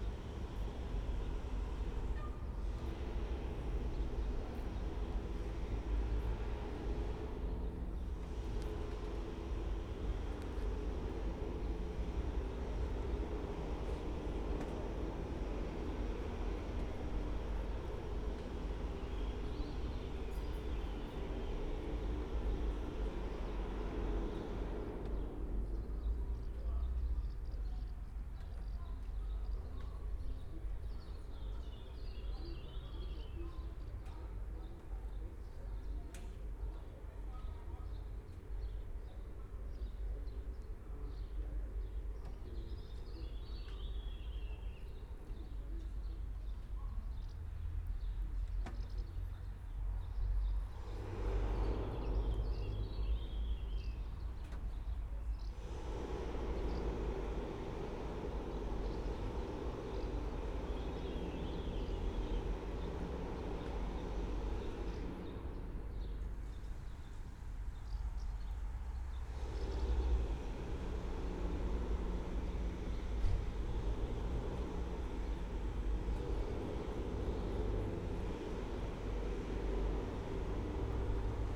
a train, sound from inside a factory buildung, voices.
(SD702 DPA4060)
Maribor, Slovenia, 30 May 2012, 14:55